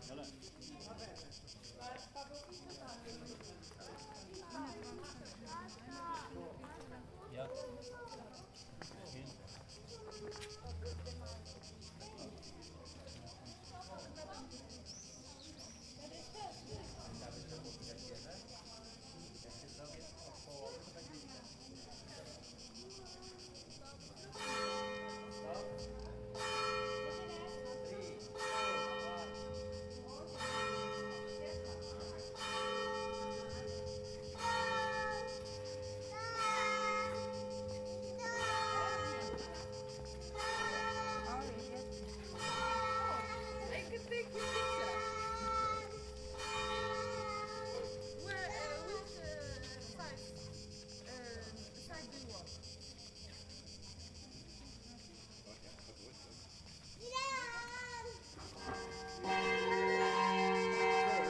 Jelsa, Hrvatska - Church bells at noon
Voices of tourists passing by an old church in Jelsa, Hvar. At noon the bells start chiming and children sing to the bells.